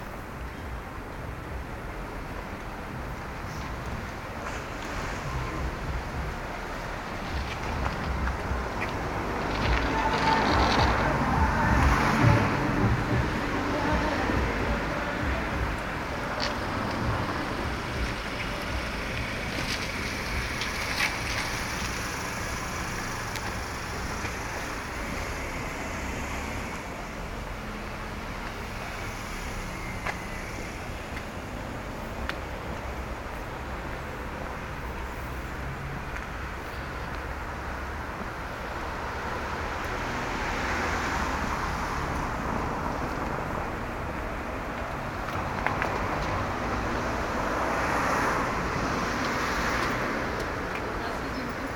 Planufer, Berlin, Deutschland - Soundwalk Planufer
Soundwalk: Along Planufer until Grimmstrasse
Friday afternoon, sunny (0° - 3° degree)
Entlang der Planufer bis Grimmstrasse
Freitag Nachmittag, sonnig (0° - 3° Grad)
Recorder / Aufnahmegerät: Zoom H2n
Mikrophones: Soundman OKM II Klassik solo
Berlin, Germany, 9 February 2018, 15:00